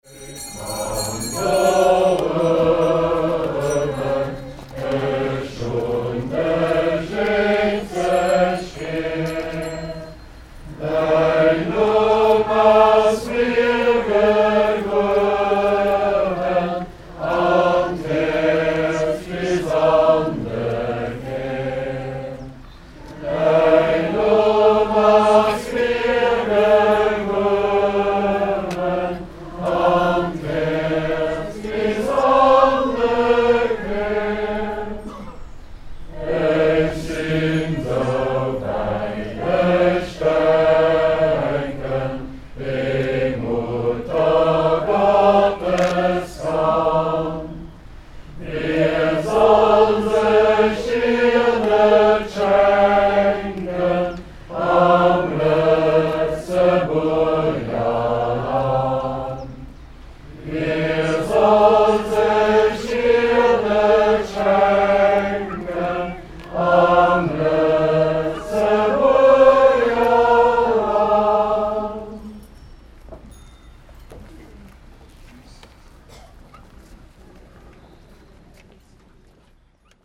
clervaux, procession walk
Outside on the town streets. A choir walking by at the Mother Gods Procession day.
Clervaux, Prozessionsweg
Draußen auf der Straße. Ein Chor geht vorbei am Tag der Muttergottesprozession. Aufgenommen von Pierre Obertin im Mai 2011.
Clervaux, procession
En extérieur, dans les rues de la ville. Une chorale marchant le jour de la procession de la Vierge. Enregistré par Pierre Obertin en mai 2011
Project - Klangraum Our - topographic field recordings, sound objects and social ambiences